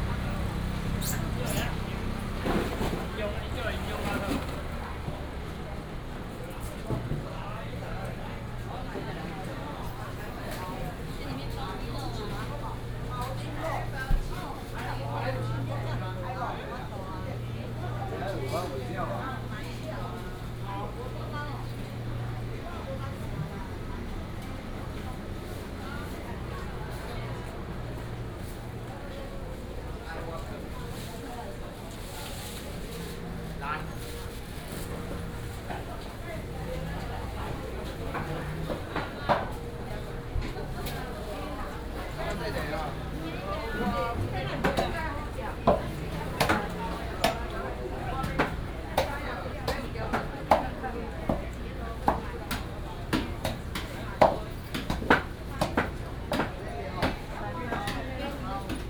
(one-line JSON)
{"title": "彰化民生市場, Changhua City - Walking in the traditional market", "date": "2017-03-18 09:05:00", "description": "Walking in the traditional market", "latitude": "24.08", "longitude": "120.55", "altitude": "24", "timezone": "Asia/Taipei"}